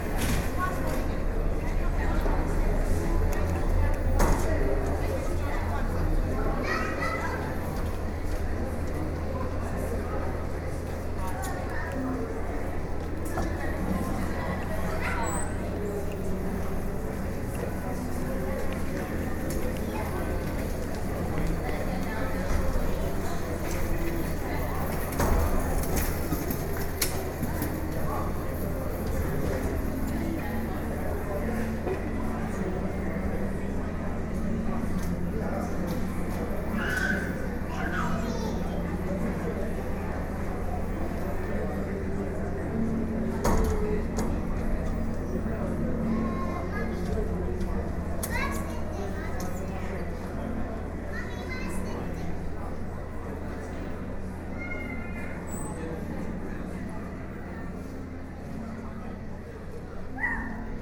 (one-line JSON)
{"title": "Royal Festival Hall - Foyer", "date": "2014-03-28 14:22:00", "description": "General foyer voices, discussion, play, music, coming and going.\nRecorded on Edirol R09HR", "latitude": "51.51", "longitude": "-0.12", "altitude": "15", "timezone": "Europe/London"}